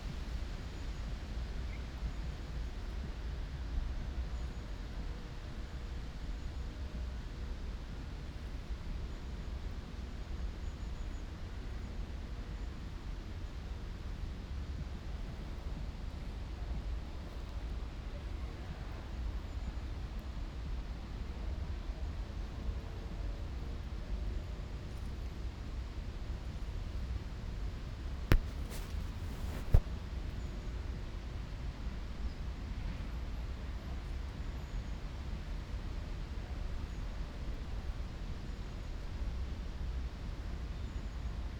"Valentino Park Friday afternoon summer soundwalk and soundscape 17 (3) months later in the time of COVID19": soundwalk & soundscape
Chapter CLXXXII of Ascolto il tuo cuore, città. I listen to your heart, city
Friday, August 27th, 2021. San Salvario district Turin, to Valentino park and back, long time after emergency disposition due to the epidemic of COVID19.
Start at 3:19 p.m. end at 4:12 p.m. duration of recording 52’51”
Walking to a bench on riverside where I stayed for few minutes.
As binaural recording is suggested headphones listening.
The entire path is associated with a synchronized GPS track recorded in the (kmz, kml, gpx) files downloadable here:
Similar paths:
10-Valentino Park at sunset soundwalk and soundscape
171-Valentino Park at sunset soundwalk and soundscape 14 months later
Piemonte, Italia, August 2021